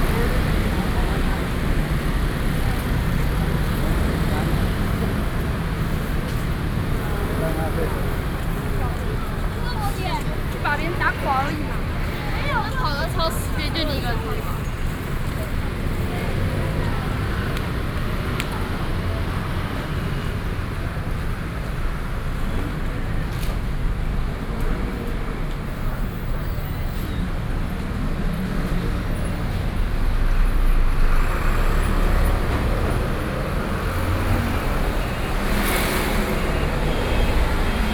New Taipei City, Taiwan - SoundWalk
Yonghe District, New Taipei City, Taiwan, 7 December, 17:05